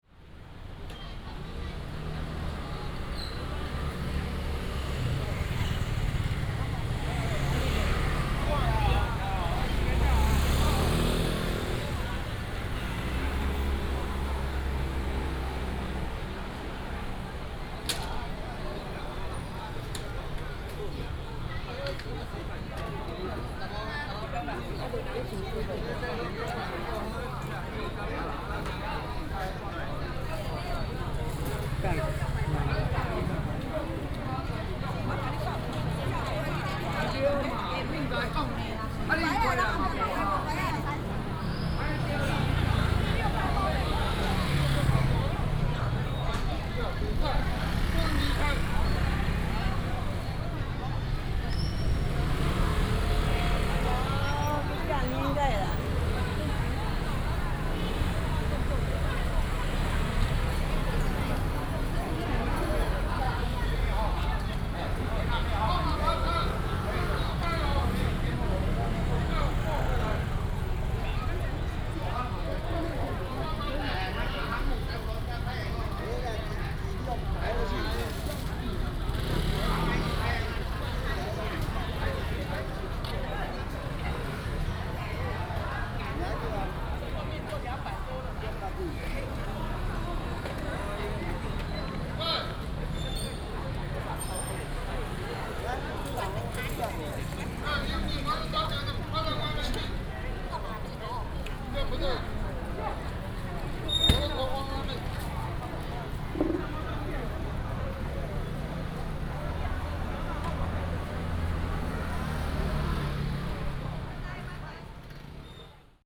Many old people are lining up to collect rice, Traffic Sound, Temple in the square